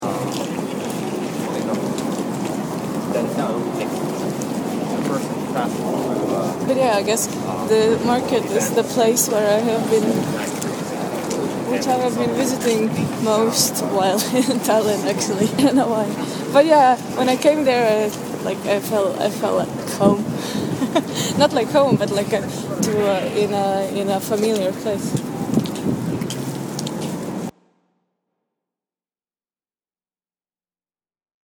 Baltijaam market reflections
conversation history and trajectory of Baltijaam market